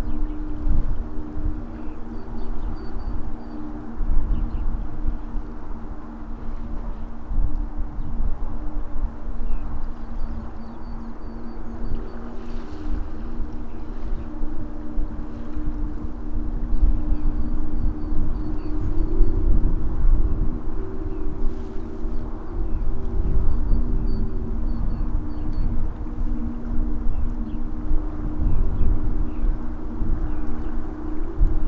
canal, Drava river, Zrkovci, Slovenia - bridge sings with the wind